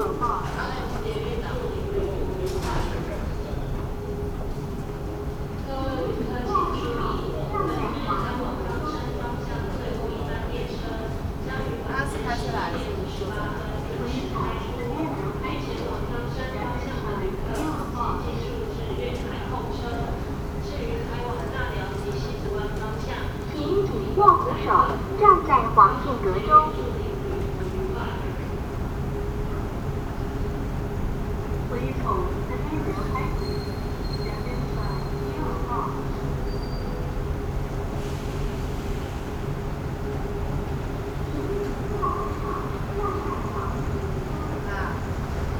{"title": "Formosa Boulevard Station, Kaohsiung City - Broadcasting", "date": "2012-04-05 23:39:00", "description": "Message broadcasting stations, Escalator message broadcasting, Sony PCM D50", "latitude": "22.63", "longitude": "120.30", "altitude": "12", "timezone": "Asia/Taipei"}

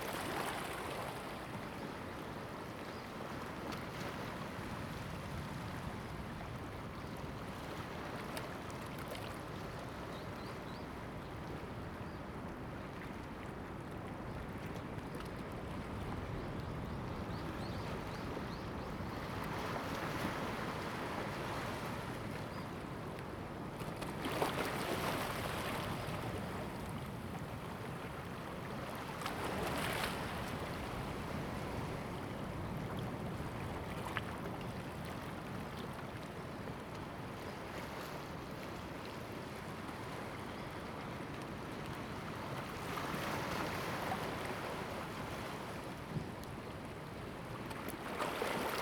{"title": "Lüdao Township, Taitung County - sound of the waves", "date": "2014-10-31 09:54:00", "description": "Tide, sound of the waves\nZoom H2n MS +XY", "latitude": "22.65", "longitude": "121.48", "altitude": "6", "timezone": "Asia/Taipei"}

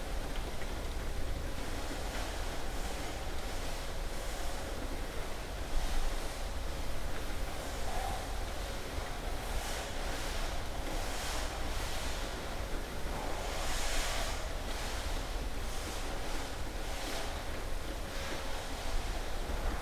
{"title": "Sint-Jans-Molenbeek, Belgium - Rain on skylight, vacuuming indoors", "date": "2013-06-19 11:00:00", "description": "We were having coffee downstairs when a massive thunderstorm struck outside. I put the recorder under the skylight and it recorded the last moments of the downpour, mixed with the sounds of the building being cleaned with a vacuum cleaner. The FoAM space being cleaned inside and out. Recorded just with EDIROL R-09 recorder.", "latitude": "50.86", "longitude": "4.34", "altitude": "16", "timezone": "Europe/Brussels"}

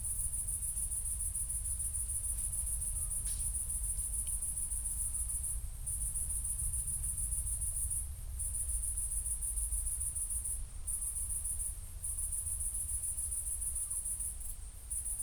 {"title": "Beselich Niedertiefenbach, Deutschland - waiting for the owl", "date": "2019-07-14 22:20:00", "description": "she accompanied us all the time, but when we wanted to record her, she went silent. A cricket instead\n(Sony PCM D50, Primo EM172)", "latitude": "50.44", "longitude": "8.15", "altitude": "243", "timezone": "Europe/Berlin"}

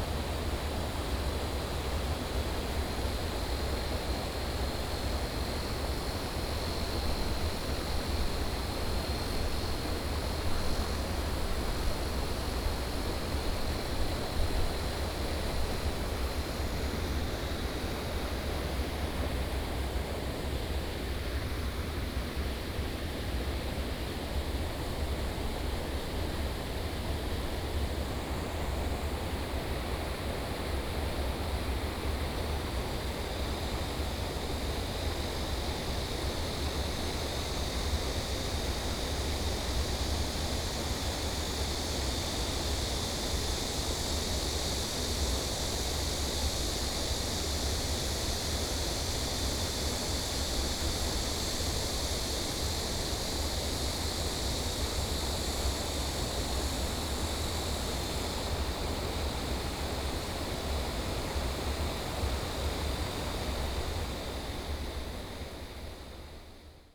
{"title": "撒烏瓦知部落, 大溪區Taoyuan City - Agricultural irrigation waterway", "date": "2017-08-08 16:08:00", "description": "Agricultural irrigation waterway, Cicada and bird sound", "latitude": "24.89", "longitude": "121.29", "altitude": "85", "timezone": "Asia/Taipei"}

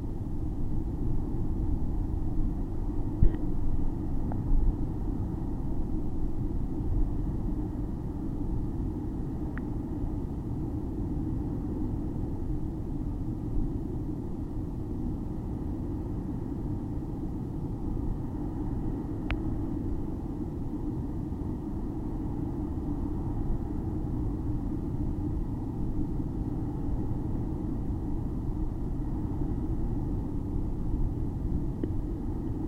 {"title": "Severn Beach Mud 05", "description": "Recording of mud near the Severn Suspension Bridge during a windy night.", "latitude": "51.57", "longitude": "-2.67", "altitude": "4", "timezone": "Europe/Berlin"}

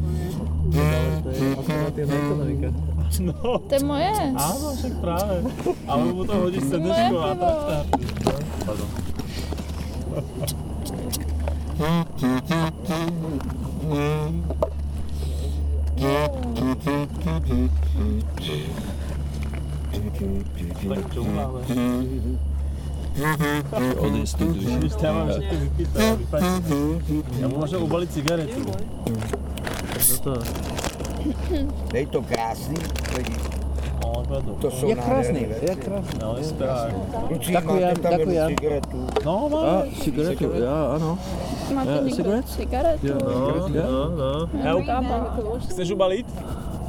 {"title": "Rambling Pianist in Letná", "date": "2009-08-10 11:55:00", "description": "This recording was recorded already last summer. Crickets in summer night atmosphere, voices of my friends and signing of the rambling pianist. You can simply sit on grass with amazing view of Vltava river and all Prague in Letná park. There is enough beer liquids, which you can buy in kiosk, that is just few meters above the tunnel (Letenský tunel).", "latitude": "50.10", "longitude": "14.43", "altitude": "217", "timezone": "Europe/Prague"}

